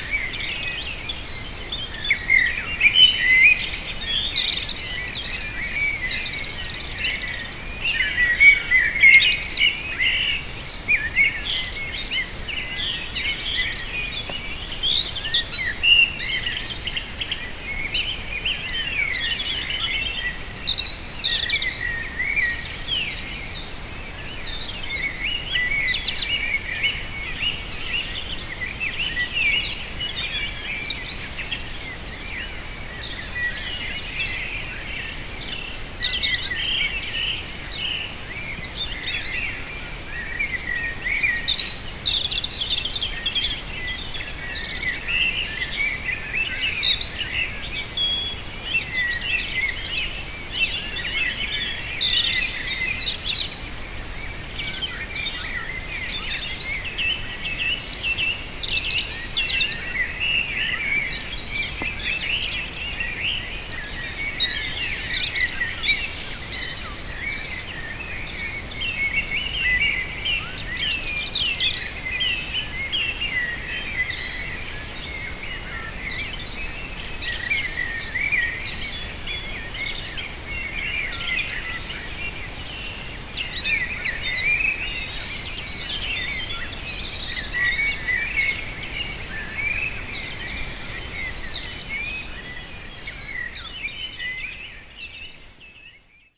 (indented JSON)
{
  "title": "Saint-Laurent-le-Minier, France - Birds on the morning",
  "date": "2011-05-12 04:50:00",
  "description": "Birds awakening, early on the morning, pure poetic moment.",
  "latitude": "43.92",
  "longitude": "3.62",
  "altitude": "358",
  "timezone": "Europe/Paris"
}